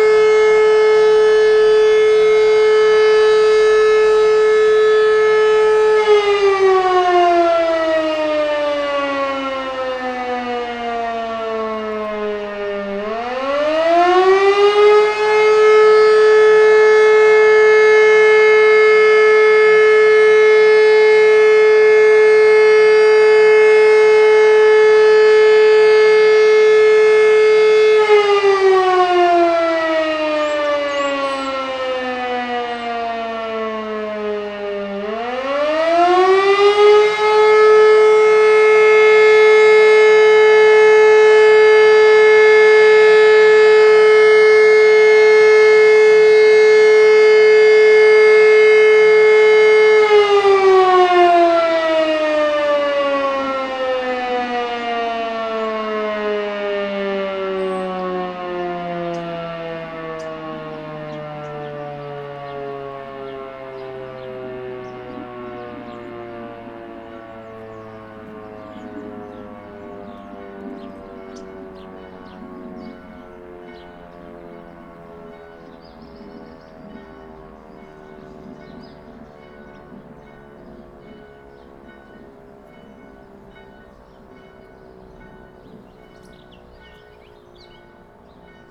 Bonaforth, Deutschland - BonaforthSamstagMittag
Bonaforth, village, Saturday, 12am, siren's wailing, church bell, Rode NT4, Fostex FR2
Hann. Münden, Germany